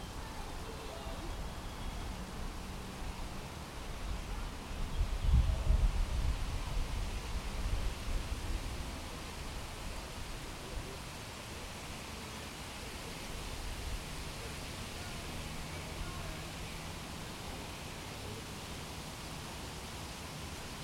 Бригантина, вулиця Вячеслава Чорновола, Вінниця, Вінницька область, Україна - Alley12,7sound7thesoundofthepeninsula
Ukraine / Vinnytsia / project Alley 12,7 / sound #7 / the sound of the peninsula
27 June 2020